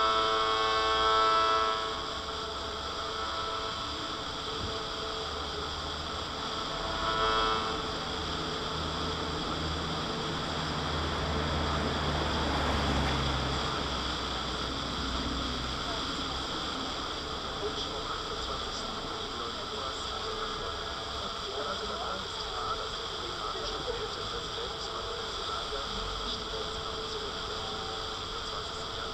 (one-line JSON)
{"title": "bürknerstraße: in front of a fashion shop - bring it back to the people: standing waves by HOKURO - transistor radio in front of a fashion shop", "date": "2009-11-28 18:49:00", "description": "transistor radio on the pavement during the transmission of the aporee event >standing waves< by HOKURO on fm 100\nHOKURO are Sachiyo Honda, Sabri Meddeb, Michael Northam (accordion, objects, strings, winds, voices and electronics)\n... we invite you to participate by playing with us on any kind of instrument or voice that can sustain an A or E or equalivant frequency - the idea is to try to maintain and weave inside a river of sound for as long as possible ... (from the invitation to the concert at radio aporee berlin, Nov. 28 2009)", "latitude": "52.49", "longitude": "13.43", "altitude": "49", "timezone": "Europe/Berlin"}